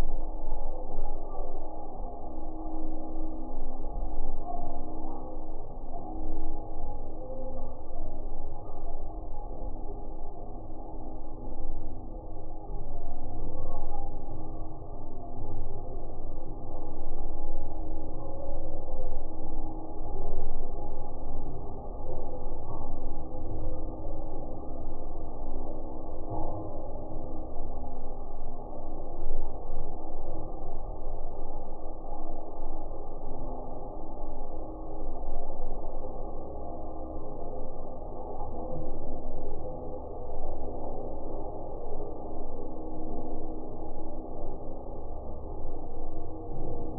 Metalic Bridge, Cais dos Mercanteis, Aveiro, Portugal - Metalic Bridge resonating
Metalic bridge resonating with people footsteps and boats passing by in the canal. Recorded with an SD mixpre6 and a LOM Geofon attached to the suspended bridge mast.